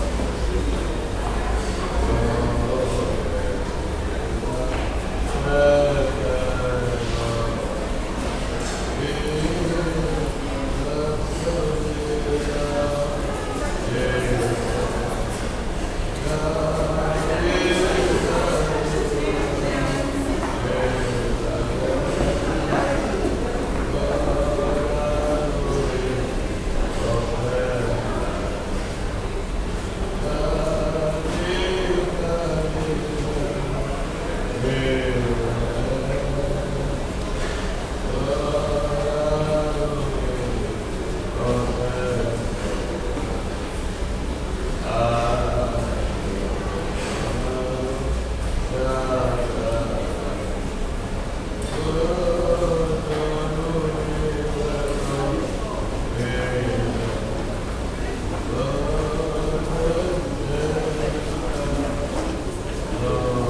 Sofia, Bulgaria, Metro 'Serdika' - Blind busker
Blind man singing in the metro passage. Binaural recording.